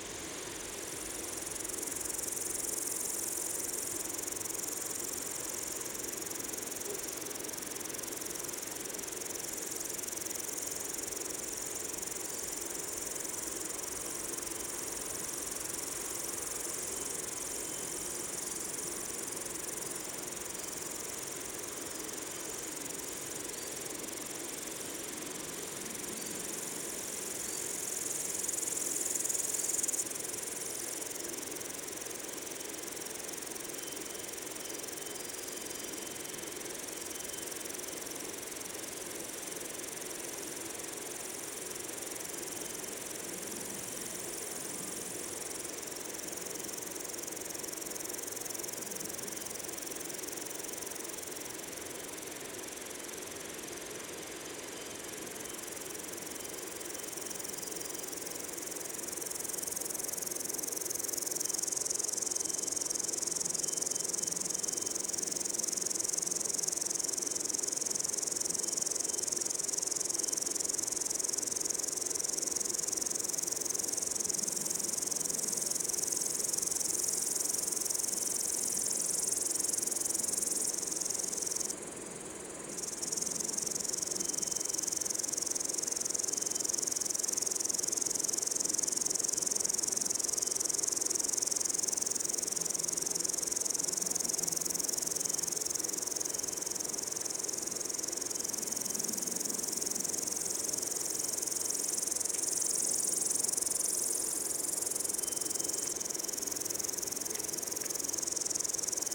Hinterthal, Austria - Dusk insects, cows and cars
In a meadow above the town of Hinterthal. Nice insects, cowbells, and at the end some passing cars on the road below. Telinga stereo parabolic mic with Tascam DR-680mkII recorder.